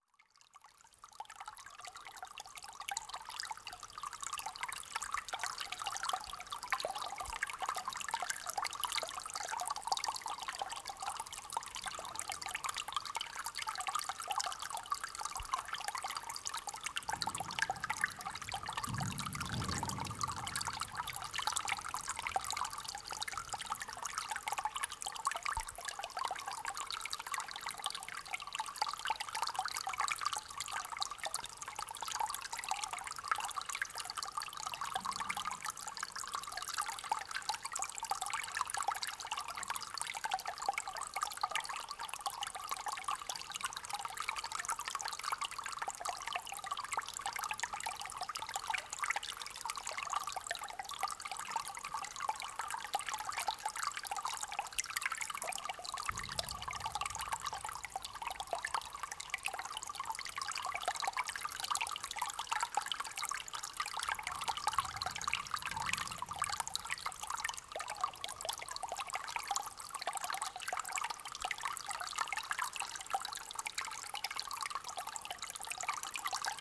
Differdange, Luxembourg - Waterstream
A small waterstream in an underground mine tunnel.